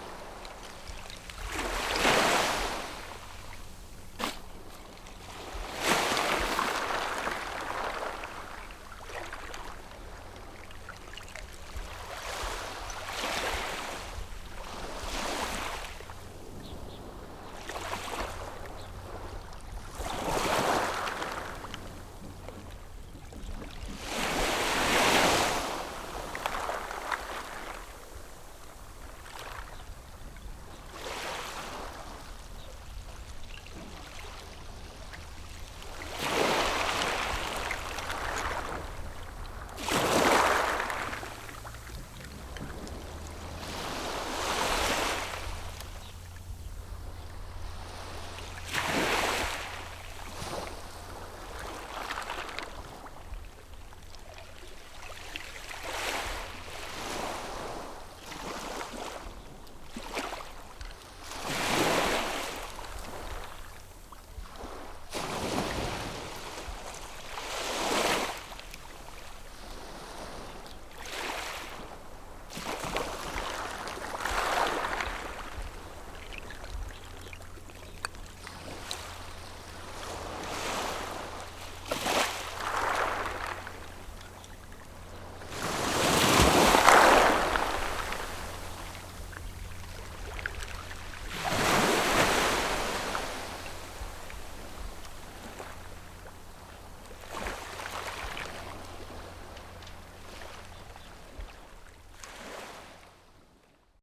芙蓉澳, Nangan Township - Small beach
Small beach, Small pier, Birds singing, Sound of the waves
Zoom H6+ Rode NT4